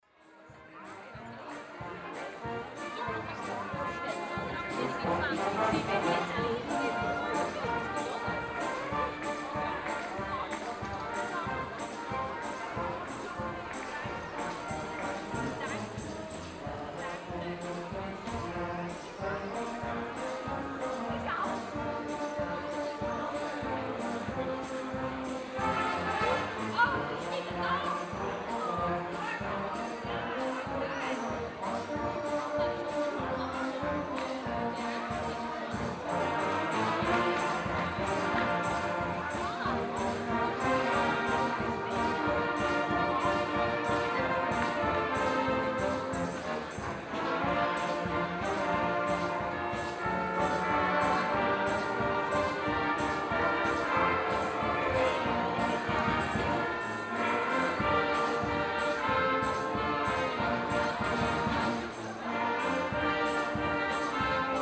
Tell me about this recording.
After the Maienzug, people are strolling through the city, listening to bands, which are playing now rather light tunes.